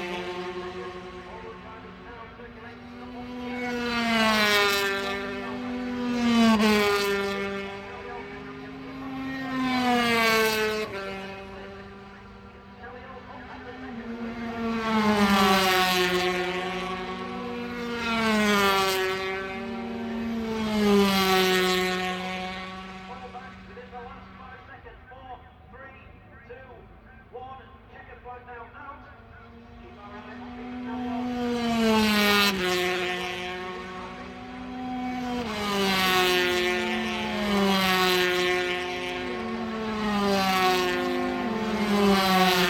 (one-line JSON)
{"title": "Donington Park Circuit, Derby, United Kingdom - british motorcycle grand prix 2005 ... 125 ...", "date": "2005-08-22 09:00:00", "description": "british motorcycle grand prix 2005 ... 125 qualifying ... one point stereo mic to mini disk ...", "latitude": "52.83", "longitude": "-1.38", "altitude": "94", "timezone": "Europe/London"}